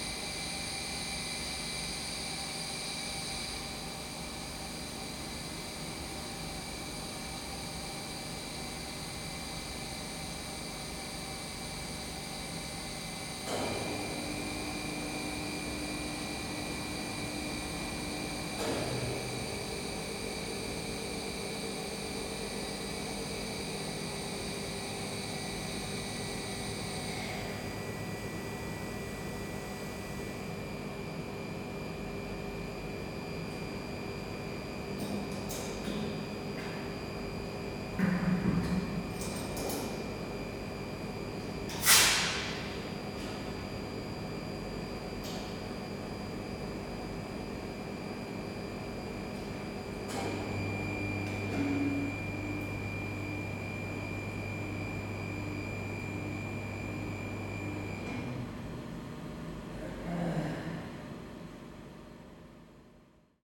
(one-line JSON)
{"title": "Brewery Moucha, sounds of brewing craft beer, Údolní, Praha-Praha, Czechia - Brewery atmosphere", "date": "2022-04-07 11:32:00", "description": "The general brewery atmosphere when the processes are on-going and it's a question of waiting the appropriate amount of time.", "latitude": "50.03", "longitude": "14.41", "altitude": "201", "timezone": "Europe/Prague"}